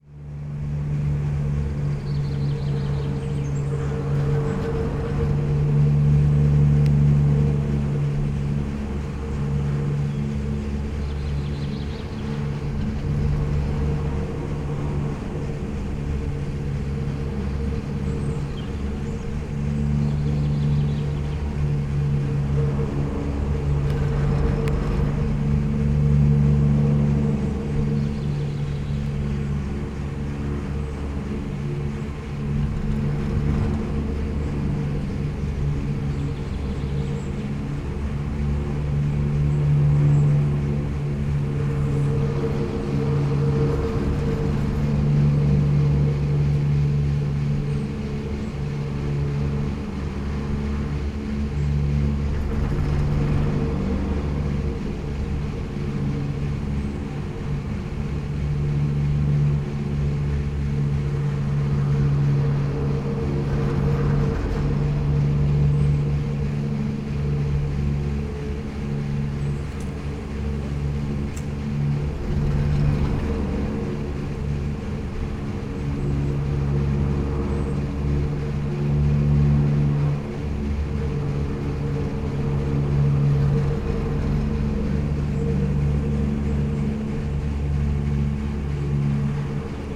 the resonant poles of the Pohorje ropeway can be heard all over the place. it also seems to be a popular place for downhill bikers, many of them are rushing down the hills. nature is in a rather bad shape here.
(SD702, DPA4060)